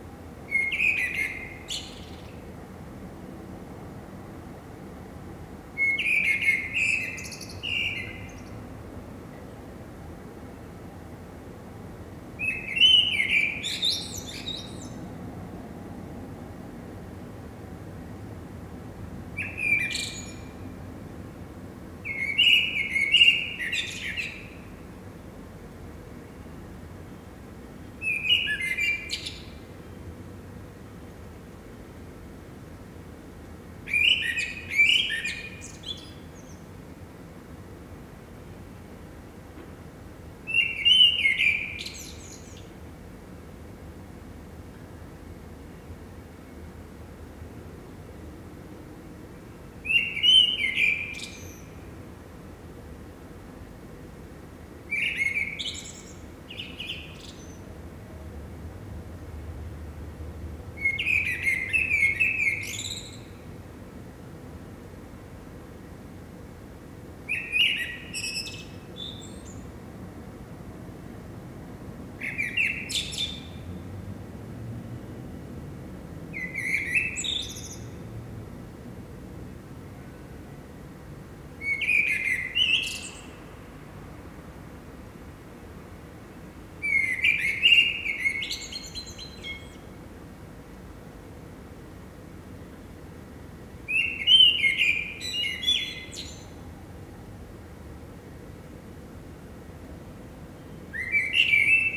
Kuipersstraat, Amsterdam, Nederland - blackbird in kuiperstraat
I lived here for 15 yearsand one morning i woke up with this beautifull blackbird from the tree behind the house
1 May 1999, 05:33